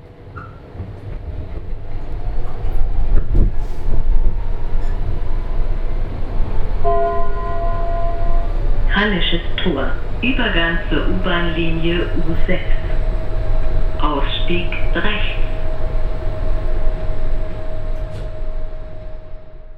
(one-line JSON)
{"title": "Berlin: U1 Hallesches Tor - Subway Ride", "date": "2001-05-20 13:33:00", "latitude": "52.50", "longitude": "13.39", "altitude": "32", "timezone": "WET"}